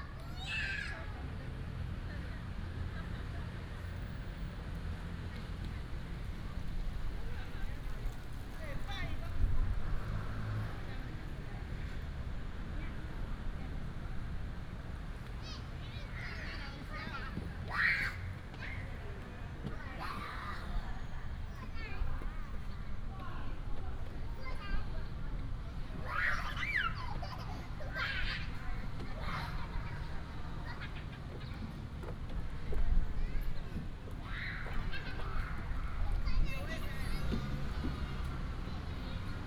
Dalong Park, Datong Dist., Taipei City - in the Park
in the Park, Traffic sound, sound of birds, Children's play area